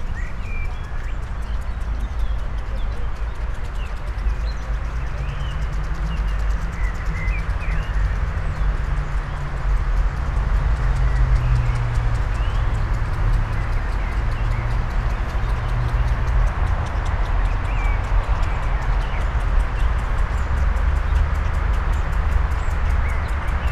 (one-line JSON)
{"title": "botanischer garten, Berlin, Germany - irrigation", "date": "2013-05-16 11:47:00", "description": "traffic noise, birds, sandy pathway", "latitude": "52.45", "longitude": "13.31", "altitude": "45", "timezone": "Europe/Berlin"}